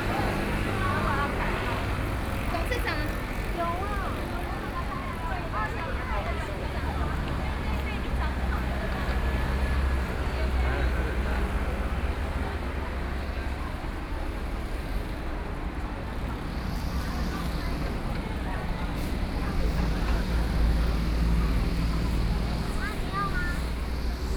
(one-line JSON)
{"title": "Yixing Rd., Yilan City - walking in the Street", "date": "2014-07-05 10:44:00", "description": "walking in the Street, Very hot weather, Many tourists, Traffic Sound\nSony PCM D50+ Soundman OKM II", "latitude": "24.75", "longitude": "121.76", "altitude": "10", "timezone": "Asia/Taipei"}